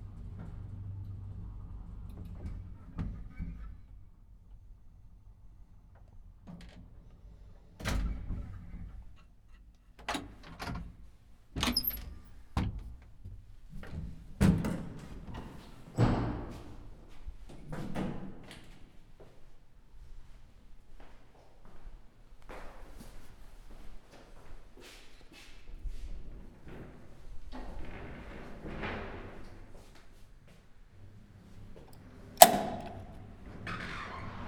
Elevator, Calea Victoriei 91
elevator, bucharest, exit to street